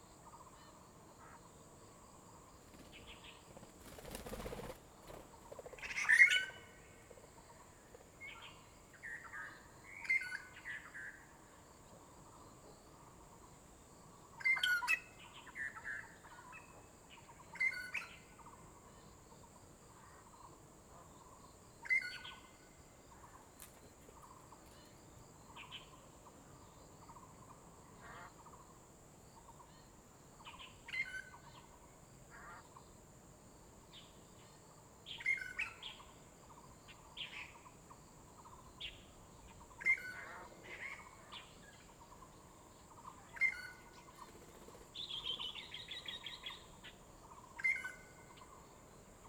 大埤池, Dawu Township - Beside the pool
Beside the pool, Bird cry, traffic sound, Many kinds of bird calls
Zoom H2n MS+XY
Dawu Township, Taitung County, Taiwan, 23 March 2018